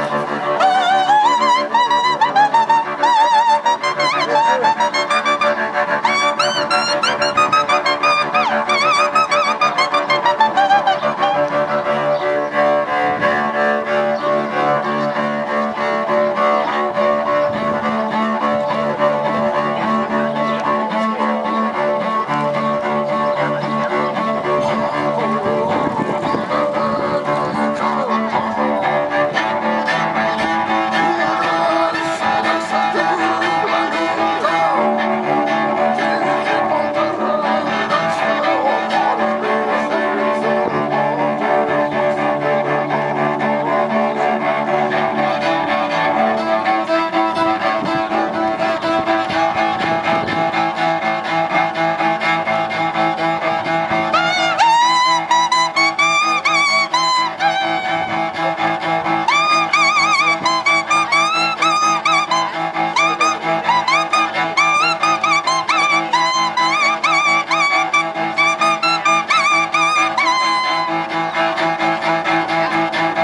June 2012 Street performer on water front.
17 June 2012, ~18:00